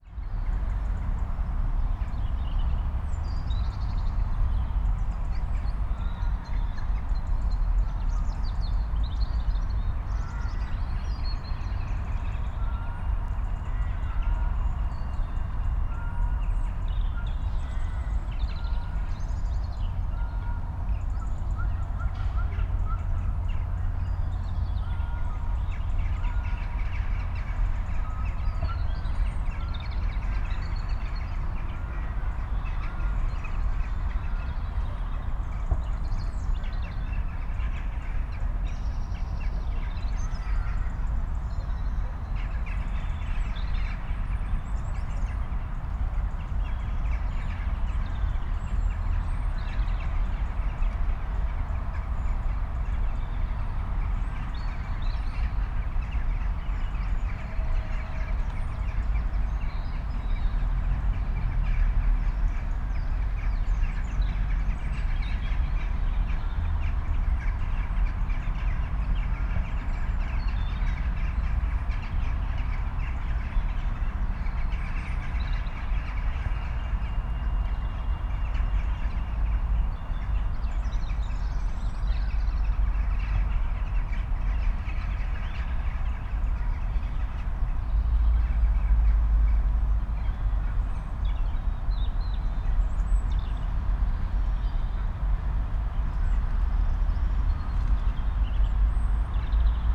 Oxford rush hour, heard from a distant position, in Oxford University Park, amplified.
(Sony D50, Primo EM172)
14 March 2014, ~18:00